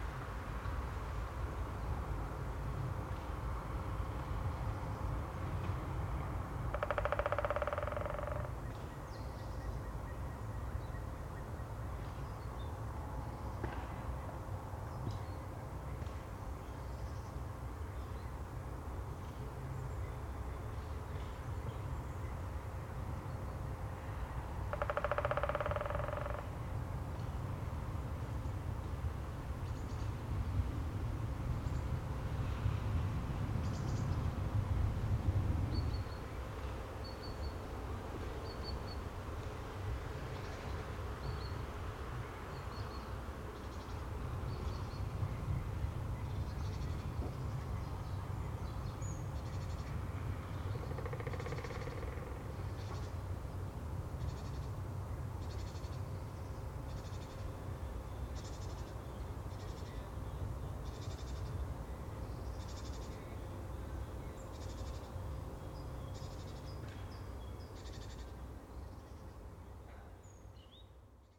Utena, Lithuania, at the swamp near town
some swamp near my town...woodpeckers and so on...